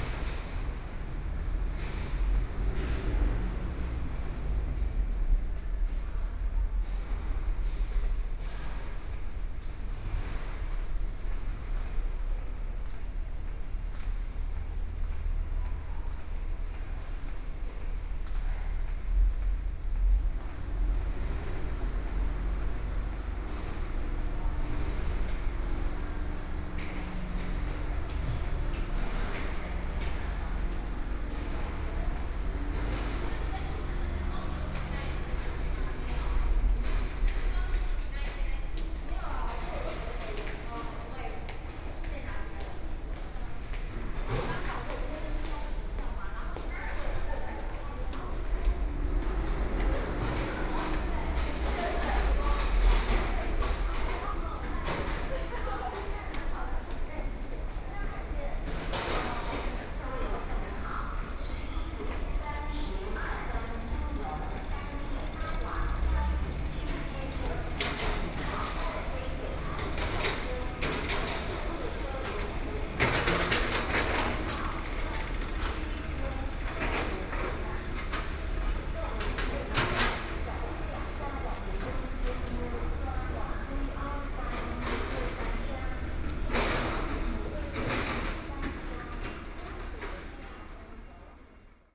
Taichung Station, Taiwan - soundwalk
From the station hall through the underground passage to the station platform, Railway construction noise, Station broadcast messages, Zoom H4n+ Soundman OKM II